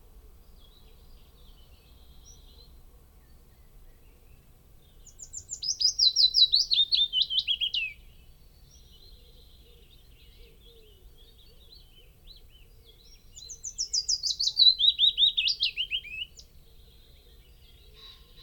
Luttons, UK - Willow warbler song soundscape ...
Willow warbler song soundscape ... binaural dummy head on tripod to minidisk ... bird calls and song from ... coal tit ... great tit ... blue tit ... whitehroat ... pheasant ... wood pigeon ... lapwing ... blackbird ... wren ... chaffinch ... blackcap ... some background noise ...
Malton, UK